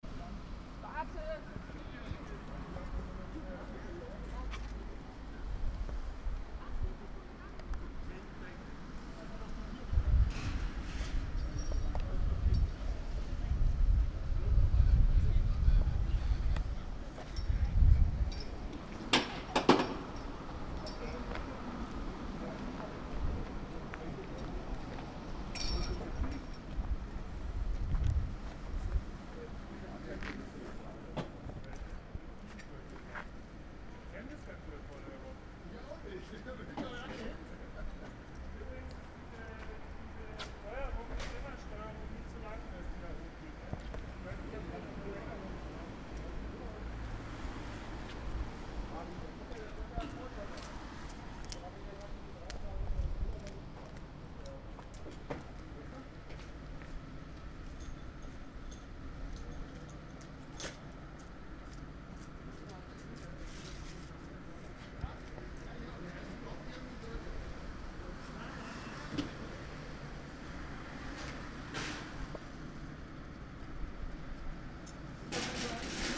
Flughafen Berlin-Tegel, Flughafen Tegel, Berlin, Deutschland - airport check in
queue for check in at Tegel Airport. A perfect choir piece, in fact.